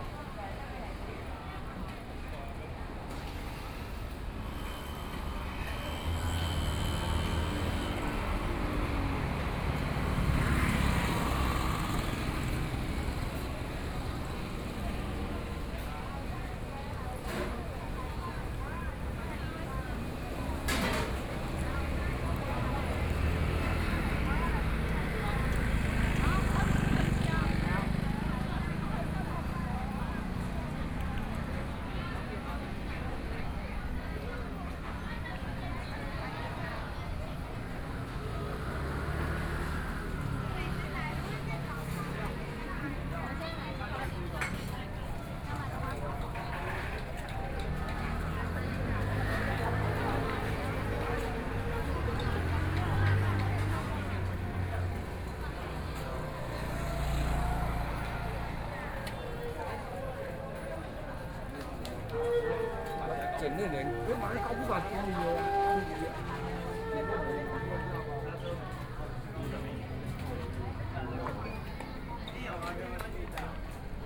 Miaoqian Rd., Qijin Dist. - walking on the Road
Hot weather, Many tourists, Various shops voices, Traffic Sound